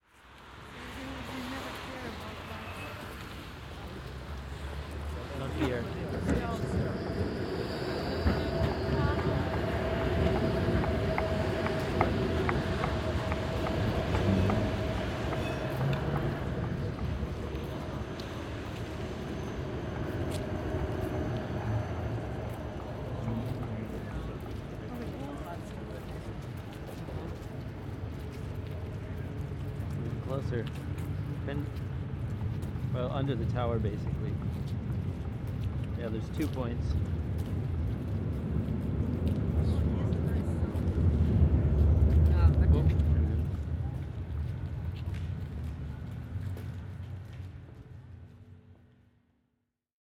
crossing the street with GPS phone, Aporee workshop
radio aporee sound tracks workshop GPS positioning walk part 4